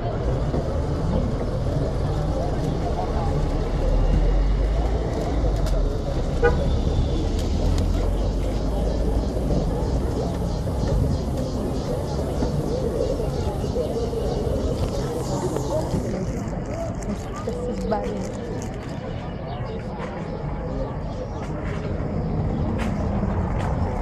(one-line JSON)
{"title": "Cachoeira, BA, Brasil - Waiting for the bus", "date": "2014-04-04 05:40:00", "description": "Recorded audio while we waiting for the intercity bus, in the bus stop next door to the local hospital and the market.", "latitude": "-12.60", "longitude": "-38.96", "altitude": "10", "timezone": "America/Bahia"}